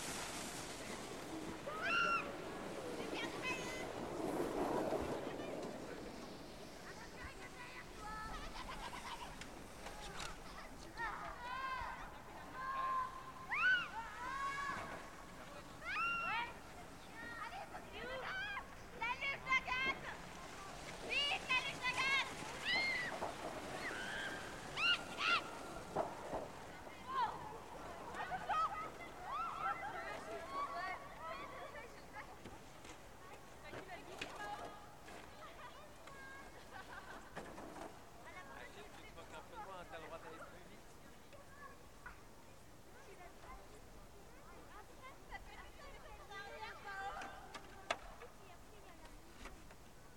Bonneval-sur-Arc, France - Bonneval in the winter
Bonneval sur Arc in the Winter, snow field at 6pm, children playing and sledging
by F Fayard - PostProdChahut
Sound Device 633, MS Neuman KM 140-KM120
France métropolitaine, France, 10 February 2016, 18:00